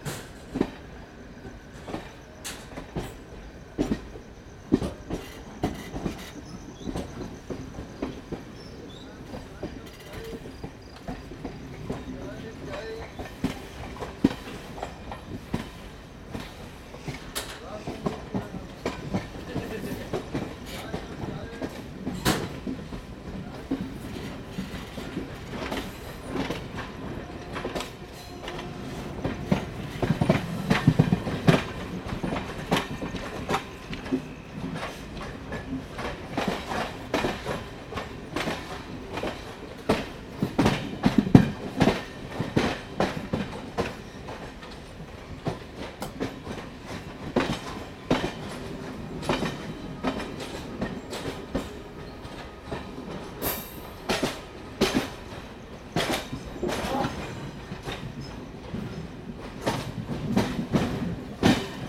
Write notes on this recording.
Allahabad Station, Ambiance gare centrale de Allahabad